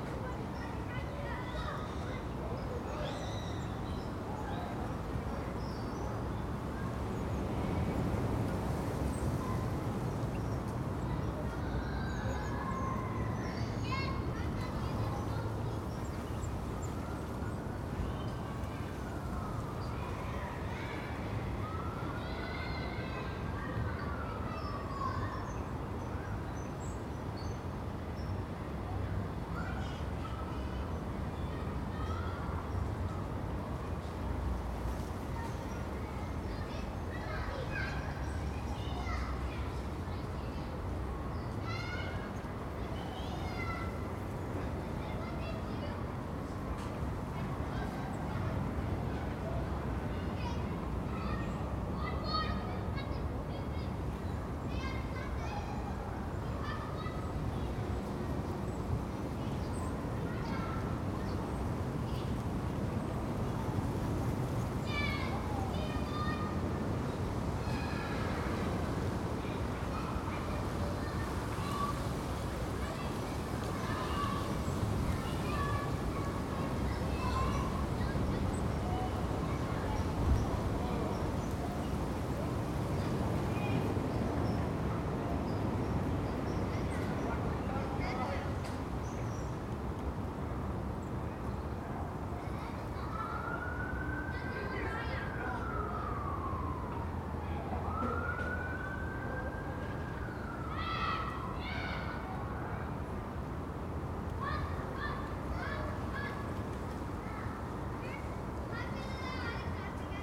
Contención Island Day 21 inner northwest - Walking to the sounds of Contención Island Day 21 Monday January 25th
The Poplars High Street Causey Street Gordon Avenue Hawthorn Road Linden Road
Stand in the grounds of All Saints Church
It is playtime at the nearby school
An insect hotel
six Jackdaws
one black-headed and two herring gulls
one tit
A few people pass
It is windier than on previous days
though still cold
25 January, 10:33